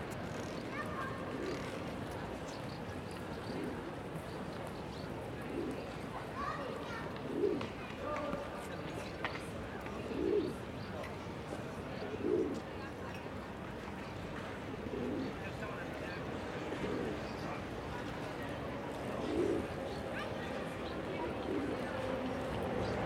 Park Zrinjevac, Zagreb - children, trams, pigeons
children, trams, pigeons in a big park, center of Zagreb